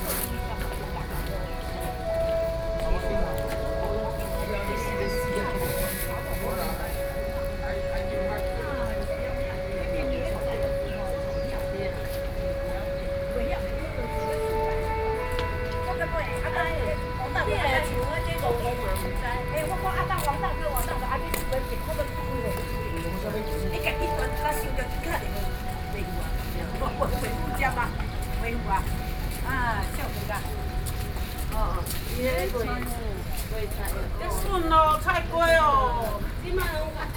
Sanxia, New Taipei City - Woman selling vegetables
New Taipei City, Taiwan